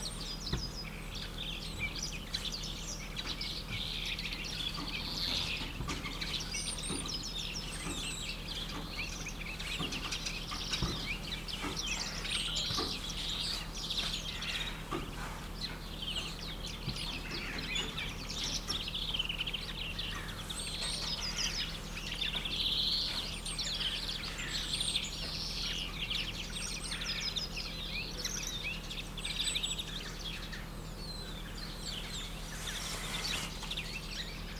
birds, car, people, Tartu, Karlova